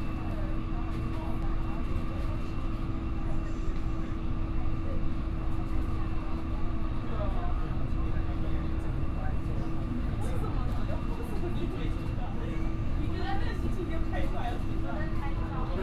Tamsui Line, Taipei City - in the MRT station car
in the MRT station car, Originally very people chatting inside, But passengers quarrel disputes, Instantly became very quiet inside, And then reply noisy cars
Taipei City, Taiwan, June 2015